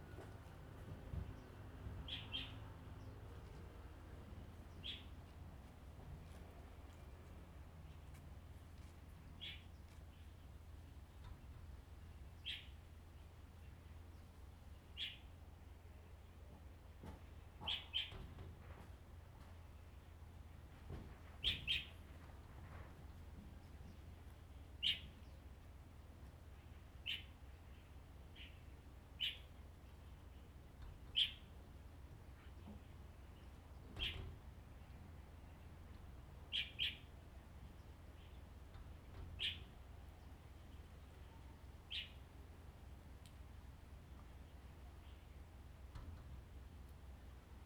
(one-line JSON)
{"title": "杉福村, Hsiao Liouciou Island - In the old house", "date": "2014-11-01 11:49:00", "description": "Birds singing, In the old house\nZoom H2n MS +XY", "latitude": "22.34", "longitude": "120.36", "altitude": "3", "timezone": "Asia/Taipei"}